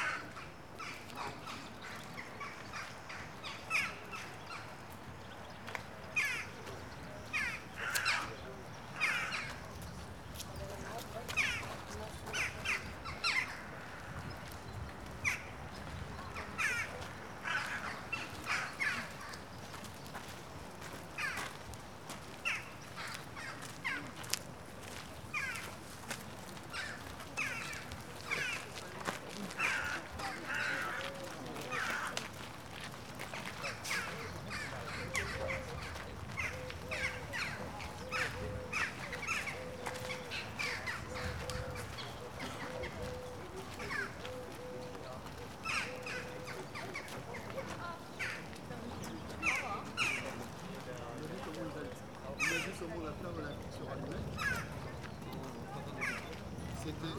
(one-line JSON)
{"title": "Knossos Ruins, Crete - crows", "date": "2012-09-28 14:09:00", "description": "crows and other birds chatting in the trees, visitors approaching", "latitude": "35.30", "longitude": "25.16", "altitude": "99", "timezone": "Europe/Athens"}